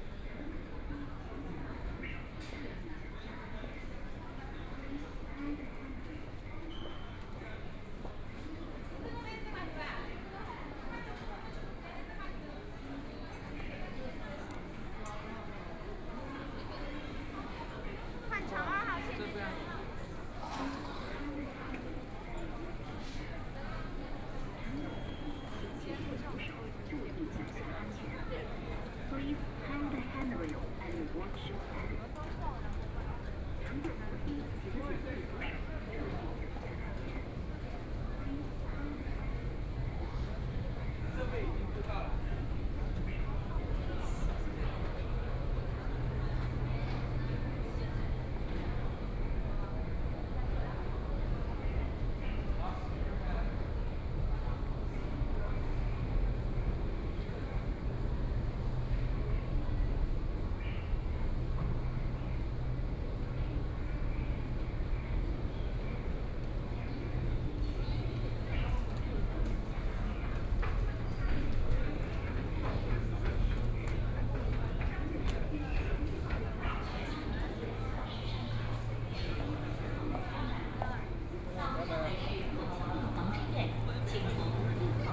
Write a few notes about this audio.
From the street to go into the underground floor of the station, After the station hall toward the station platform, Then enter the subway car, Binaural recording, Zoom H6+ Soundman OKM II